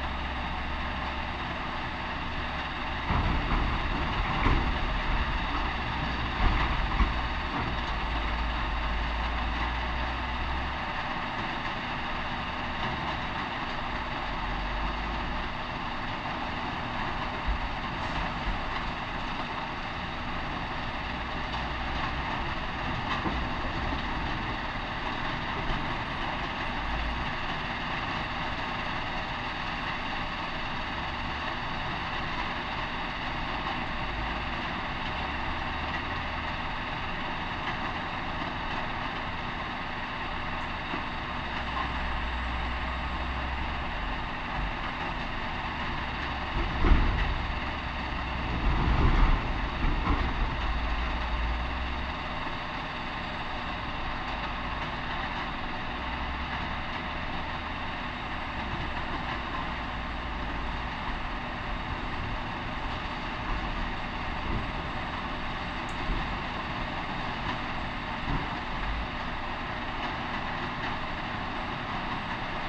Via Trieste, Savogna DIsonzo GO, Italy - Quarry Devetachi
Quarry devetachi, crushing stones, trucks bring in new material.
Recorded with LOM Uši Pro, AB Stereo Mic Technique, 50cm apart.
Cava Devetachi